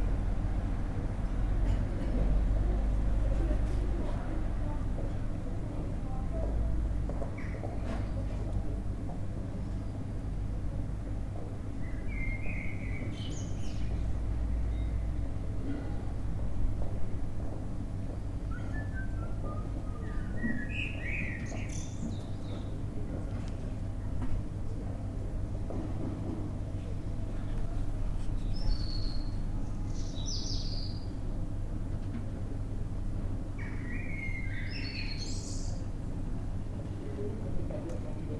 Eguisheim, Place de l'Eglise, Frankreich - Church's place
Ambience in a quiet place in a quiet village: Some birds, some voices, traffic noise in the distance.